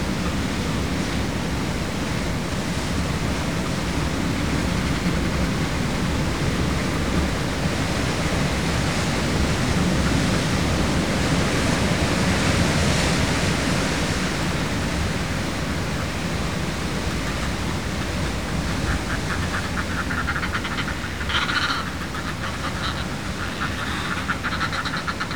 Dunnet Head, Highlands - Cliffside bird colony
I'm no ornithologist, so I couldn't tell you what kind of birds these are but they looked not unlike gulls and there were hundreds of them - and others - along the cliffs here at Dunnet Head. I climbed down the cliff as far as I felt comfortable to get closer but quickly got too scared to try to descend any more.
Thurso, UK, May 2016